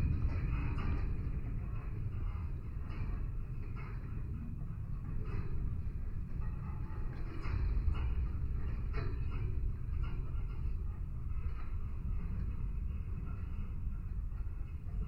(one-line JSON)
{"title": "Leliūnų sen., Lithuania, metallic fence", "date": "2016-03-04 13:30:00", "description": "contact microphones placed on metallic fence", "latitude": "55.48", "longitude": "25.55", "altitude": "133", "timezone": "Europe/Vilnius"}